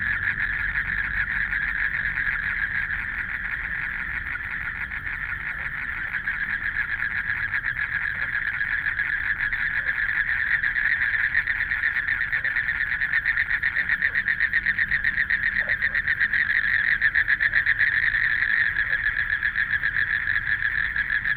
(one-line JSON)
{"title": "BiHu Park, Taipei City - Frogs sound", "date": "2014-03-19 19:31:00", "description": "In the park, At the lake, Frogs sound, Traffic Sound\nBinaural recordings", "latitude": "25.08", "longitude": "121.58", "altitude": "23", "timezone": "Asia/Taipei"}